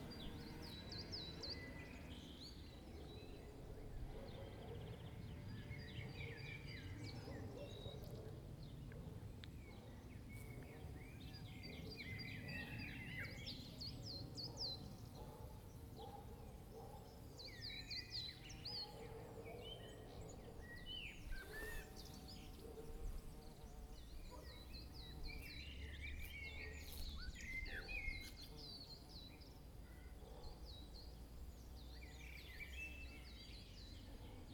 Nice pond in this village, sounds of nature. Recorded with a Zoom h2n.